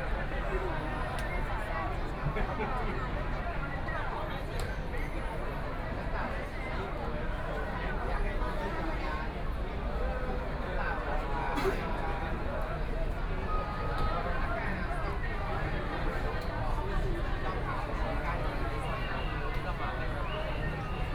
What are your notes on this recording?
Packed with people on the roads to protest government, Walking through the site in protest, People cheering, Nearby streets are packed with all the people participating in the protest, The number of people participating in protests over fifty, Binaural recordings, Sony PCM D100 + Soundman OKM II